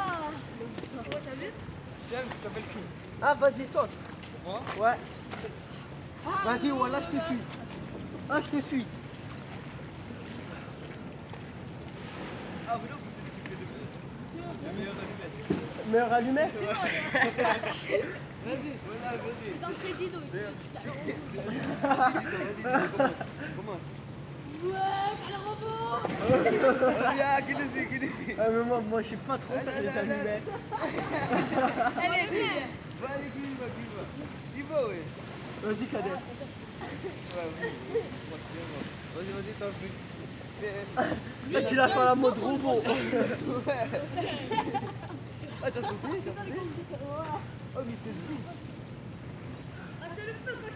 Marseille, Frankreich - Marseille, near Quai Marcel Pagnol - Baignade interdite
Marseille, near Quai Marcel Pagnol - Baignade interdite.
[Hi-MD-recorder Sony MZ-NH900, Beyerdynamic MCE 82]